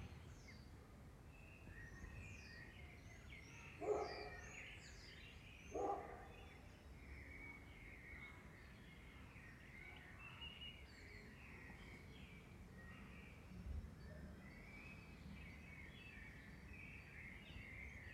Waardassackerstraat, Abcoude, Netherlands - Calm suburban evening
Recorded with two DPA 4061 Omni directional microphones in a binaural setup/format. Preferably listen with a decent pair of headphones. Easy and fairly calm evening in village on the outskirts of Amsterdam.